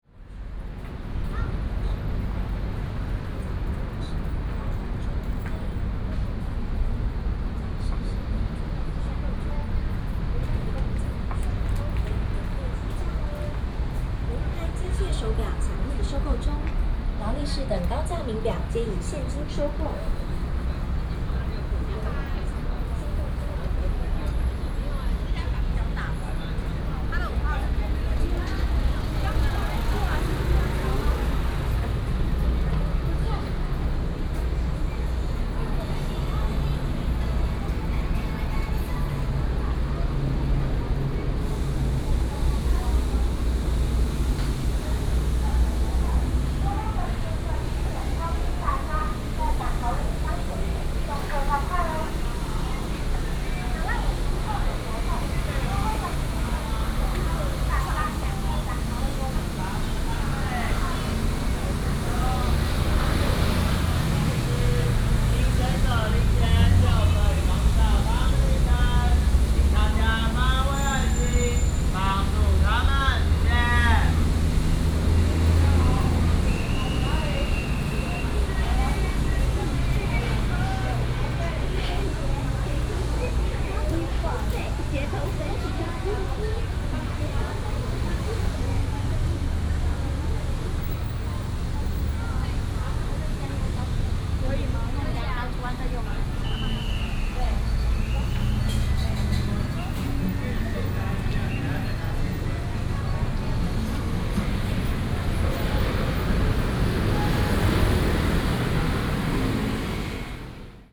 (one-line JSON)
{"title": "Sec., Wenhua Rd., Banqiao Dist., New Taipei City - Walking on the road", "date": "2015-07-29 15:27:00", "description": "Various shops, Footsteps and Traffic Sound", "latitude": "25.02", "longitude": "121.47", "altitude": "13", "timezone": "Asia/Taipei"}